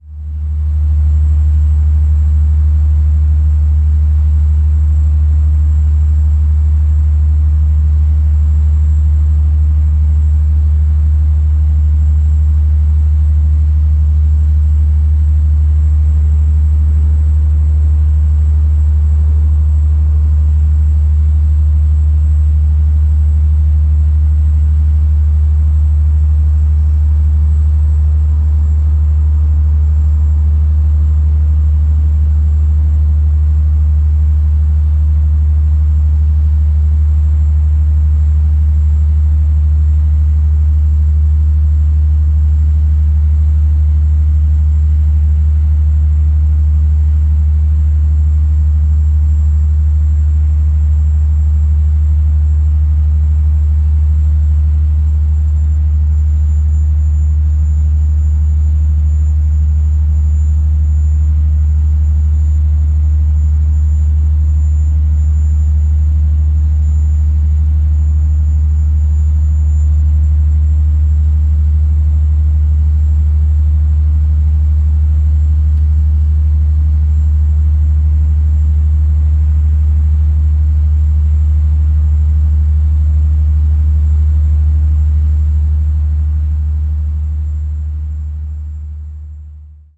Rhein, Köln Deutz, Germany - ship engine, multiple drone
passenger ship idling at the landing stage, deep engine drone
(Sony PCM D50, DPA4060)